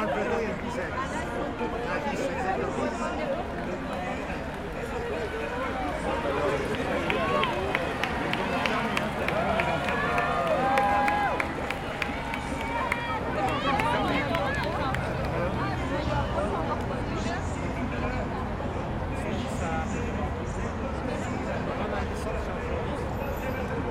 Champs Elysées, Paris - Le Tour/Tour de France 2010, Tour de France, Final Lap, Champs Elysées, Pari
Crowd, commentary, support vehicles, cyclists, on the final lap of the Tour de France 2010, Champs Elysées, Paris.